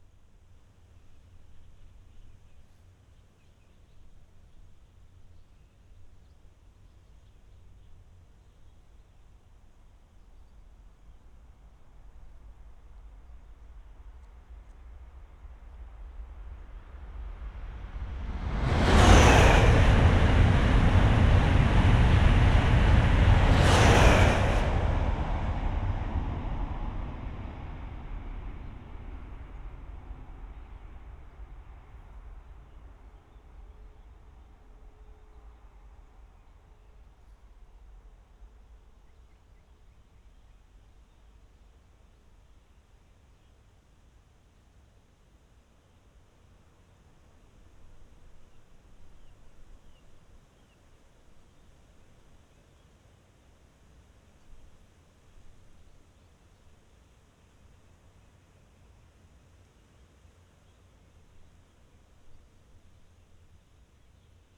Schönhausen, Elbe, train bridge - ICE passing
ICE train crosses river Elbe direction Berlin
(SD702, Audio technica BP4025)
Hämerten, Germany, 19 May 2012